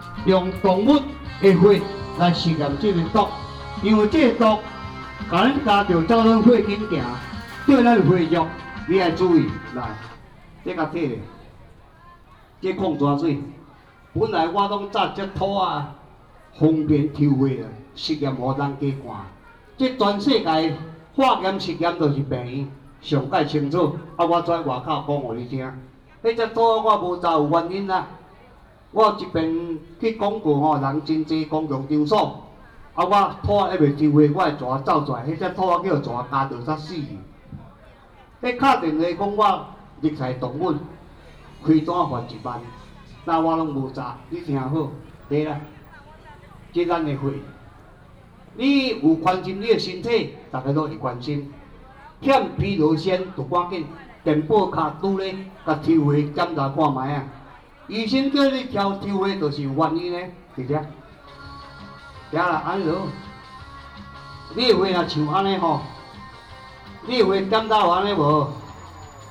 白沙屯, 苗栗縣通霄鎮 - promoted products
Temporary marketplace, promoted products
Miaoli County, Tongxiao Township, March 2017